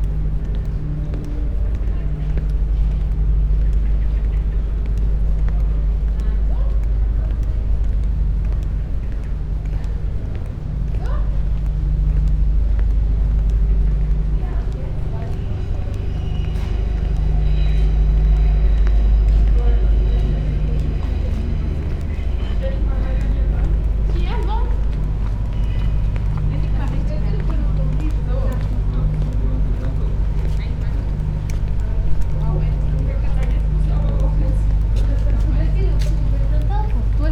head of an island, arcades, Mitte, Berlin, Germany - walking, clogs
river traffic and another light turn of S-bahn train tracks ... seems only curves in the city are train and river curves ... rain starts
Sonopoetic paths Berlin
4 September 2015, ~16:00